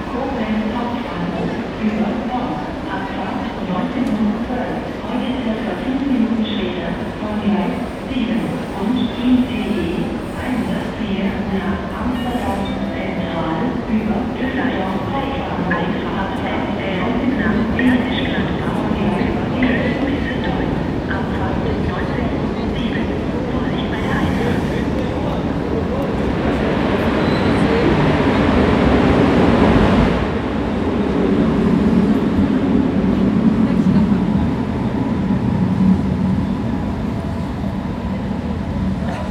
Altstadt-Nord, Köln, Deutschland - Köln Hauptbahnhof / Cologne Central Station
Geräusche aus dem Kölner Hauptbahnhof, Gleis 10. / Noise from the Cologne main train station, railway 10.